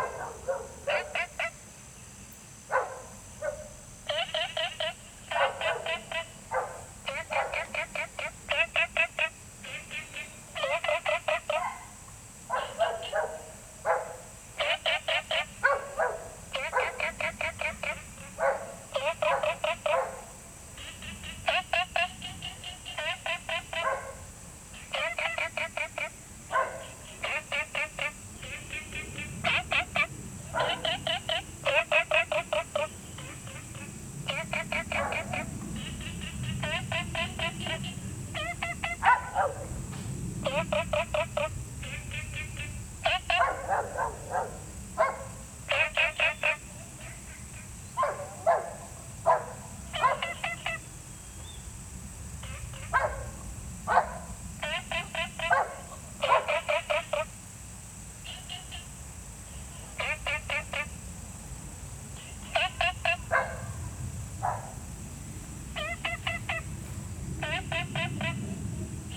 青蛙ㄚ 婆的家, Taomi Ln., Puli Township - Frog chirping and Insect sounds
Frogs chirping, Small ecological pool, Insect sounds, Dogs barking
Zoom H2n MS+XY
Puli Township, 桃米巷11-3號